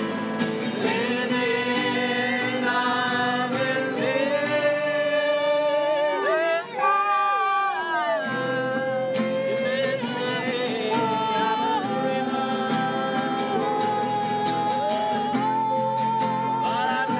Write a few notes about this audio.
giornata in piazza DIVERSAMENTE 10 OTTOBRE